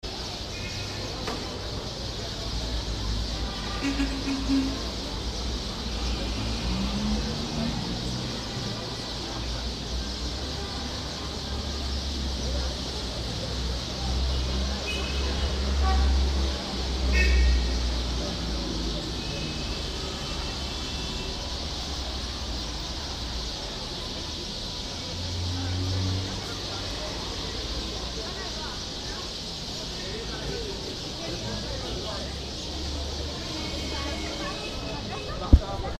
up in the tree - the same rush like at the bottom